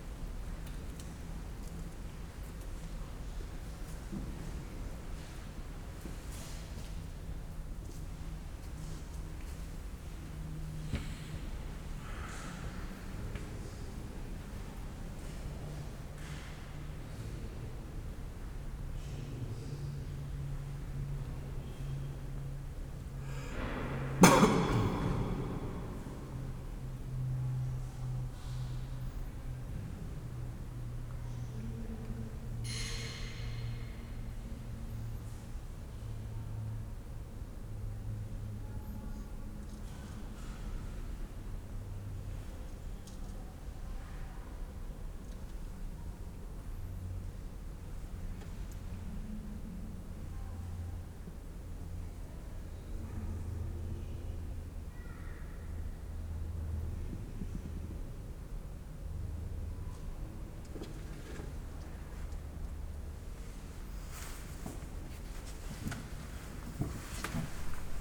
Limburg an der Lahn, Deutschland - inside cathedral ambience
ambience late Sunday afternoon, inside the cathedral (Limburger Dom)
(Sony PCM D50, DPA4060)